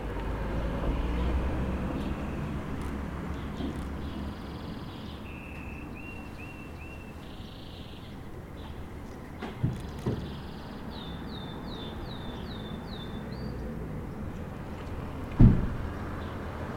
Rue de la République, Aix-les-Bains, France - Canari
Les martinets sont partis, il reste quelques oiseaux domestiques, un canari en cage sur un balcon. J'ai connu ça dans mon enfance le chant du canari dans la cuisine stimulé par la cocotte minute Seb avec sa vapeur tournante. C'est une rue à sens unique .